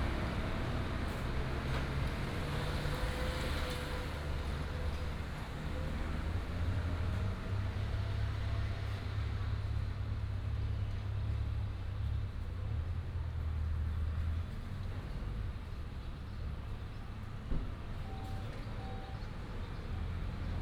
Sec., Tailin Rd., Taishan Dist. - Traffic sound
bird sound, Traffic sound